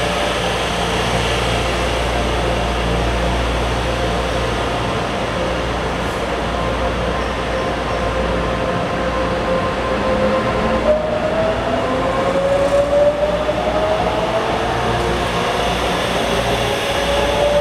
Fengshan Station - Platform
in the station platform waiting for the train, Sony Hi-MD MZ-RH1, Rode NT4